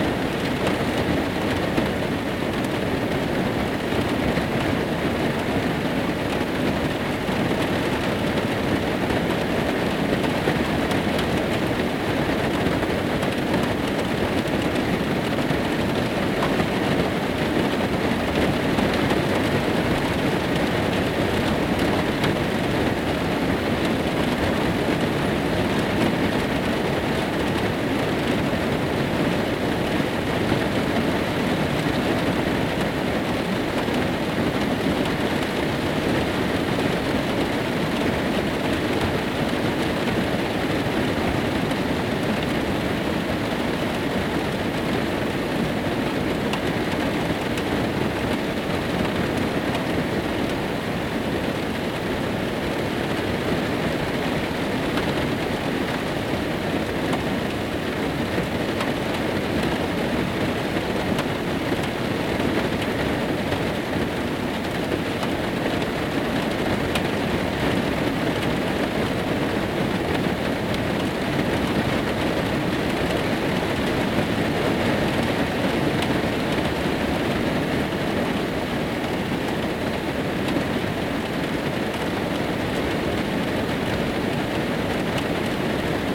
Night recording of rain, inside a van at campsite nearby Mount Cook.
ZoomH4 in stereo.
Canterbury, New Zealand / Aotearoa, 2 June 2021, 23:41